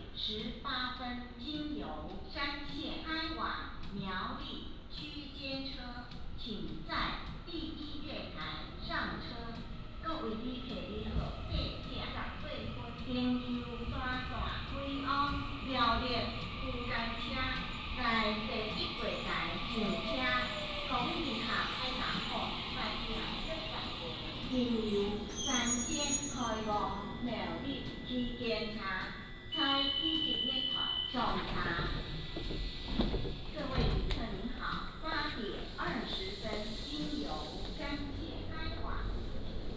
Fengfu Station, 後龍鎮校椅里 - At the station platform
Station information broadcast, High-speed train passing through, Footsteps